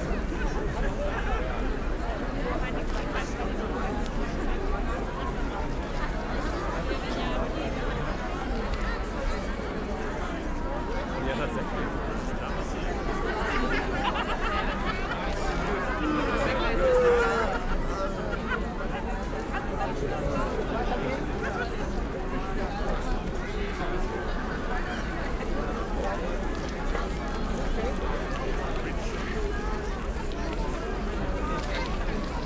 Leuschnerdamm, Engelbecken - people celebrating 25 years of german unity
Berlin, Kreuzberg, former Berlin Wall area, lots of people celebrating 25y of German Unity
(Sony PCM D50, DPA4060)